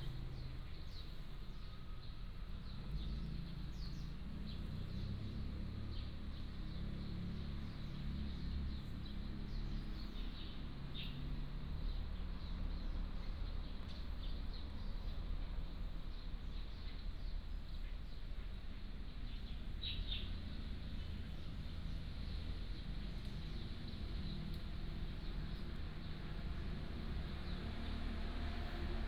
林投村, Penghu County - In front of the temple
In front of the temple tree, Traffic Sound, Birds singing
Penghu County, Husi Township, 204縣道, October 2014